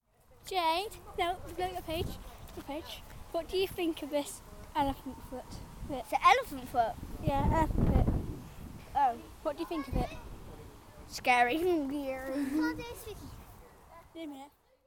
{
  "title": "Efford Walk Two: Talking by Elephants Graveyard - Talking by Elephants Graveyard",
  "date": "2010-09-24 16:41:00",
  "latitude": "50.39",
  "longitude": "-4.10",
  "timezone": "Europe/London"
}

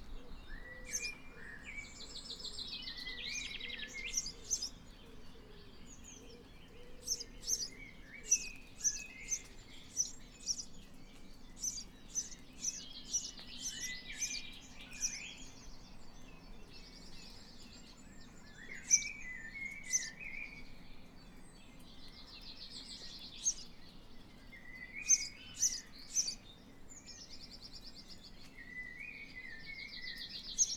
{
  "title": "Dartington, Devon, UK - soundcamp2015dartington house sparrow",
  "date": "2015-05-03 07:35:00",
  "latitude": "50.45",
  "longitude": "-3.69",
  "altitude": "52",
  "timezone": "Europe/London"
}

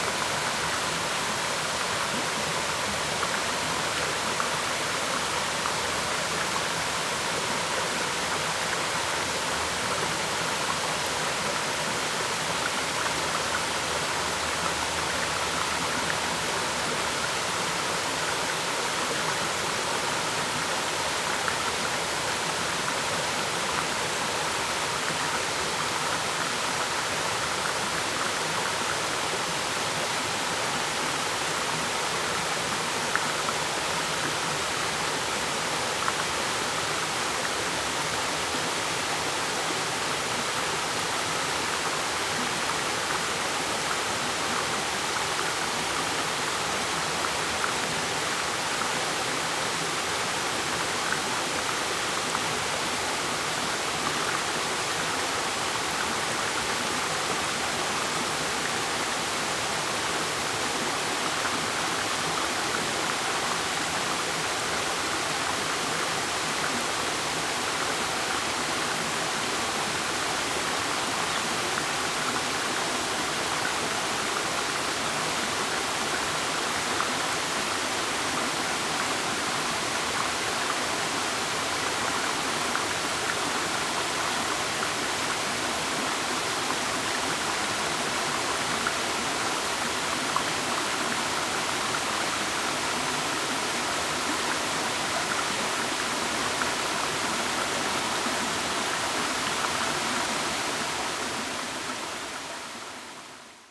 {
  "title": "佳山溪, 佳民村 Xiulin Township - stream",
  "date": "2016-12-14 09:56:00",
  "description": "stream\nZoom H2n MS+XY +Sptial Audio",
  "latitude": "24.02",
  "longitude": "121.58",
  "altitude": "169",
  "timezone": "GMT+1"
}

